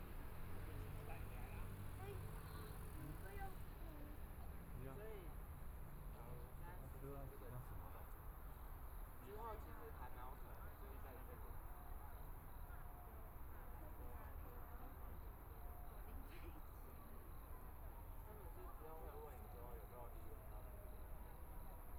The airport at night, Traffic Sound, Binaural recordings, Zoom H4n+ Soundman OKM II
Taipei City, Taiwan